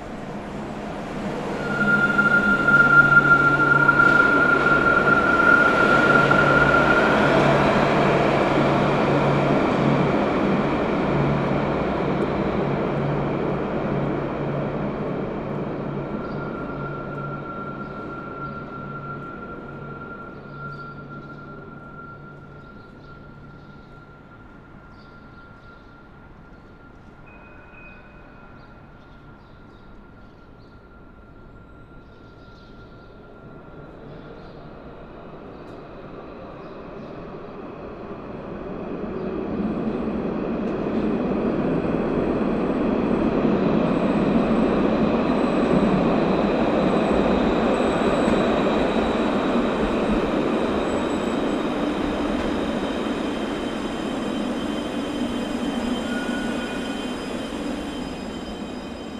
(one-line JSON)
{"title": "Rue du Château d'Eau, Bordeaux, France - Tramway UFO", "date": "2022-02-12 08:05:00", "description": "A real architectural UFO, the former “Caisse d’épargne” of the architects Edmond Lay, Pierre Layré-Cassou and Pierre Dugravier was built in 1977 and became in 2014 the brutalist building of Bordeaux classified as a historical monument.", "latitude": "44.84", "longitude": "-0.58", "altitude": "21", "timezone": "Europe/Paris"}